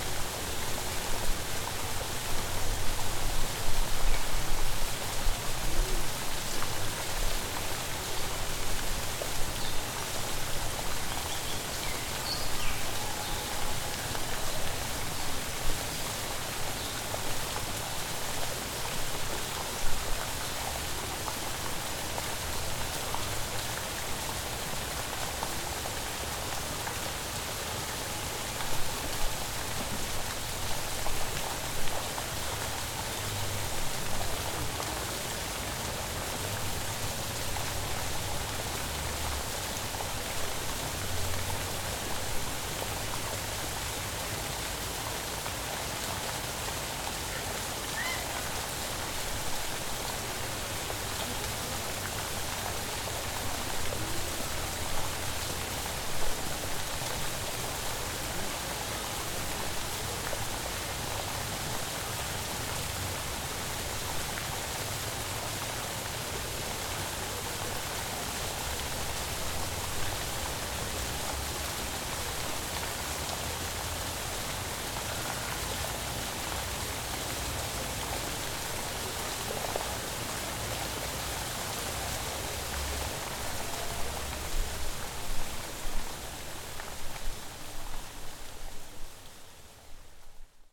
Descripción: Bloque 18 de la Universidad de Medellín.
Sonido tónico: fuentes y pájaros cantando.
Señal sonora: personas riendo
Técnica: grabación con Zoom H6 y micrófono XY
Grupo: Luis Miguel Cartagena Blandón, María Alejandra Flórez Espinosa, María Alejandra Giraldo Pareja, Santiago Madera Villegas y Mariantonia Mejía Restrepo
Antioquia, Región Andina, Colombia, September 2021